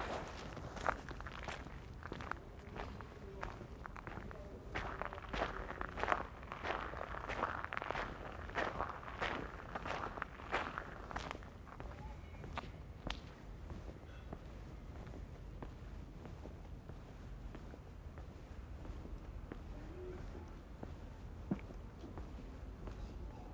The cranes on the Google map are no longer there, but these are very new apartments. People are still moving in. The sounds of work inside still continues. The buildings surround a long narrow garden full of exotic plants and areas of different surfaces – gravel, small stones, sand – for walking and for kids to play. Perhaps this is Berlin's most up-to-date Hinterhof. There is 'green' design in all directions, except perhaps underfoot - surely grass would be nicer than so much paving. The many bike stands are all metal that ring beautifully when hit by hand. Together with the resonant railings they are an accidental musical instrument just waiting to be played.
7 November 2020, ~15:00